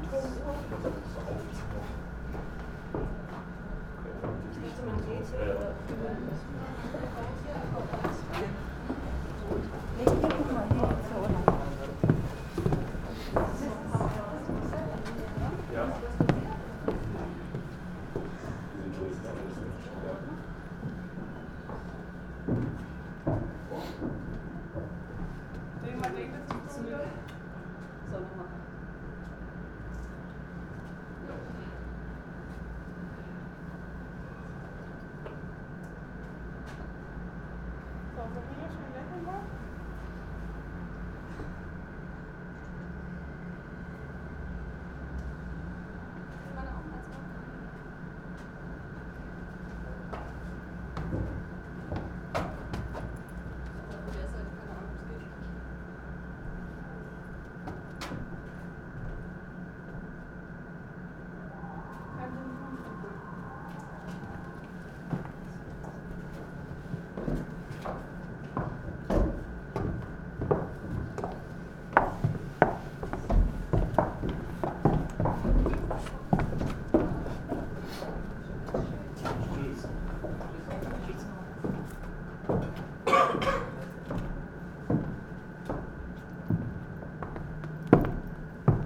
berlin, zionskirche - berlin, zionskirche, tower
zionskirche, tower, a freezing guy up there colects 1 euro from everybody who climbs up here. hum from an e-plus mobile phone antenna station.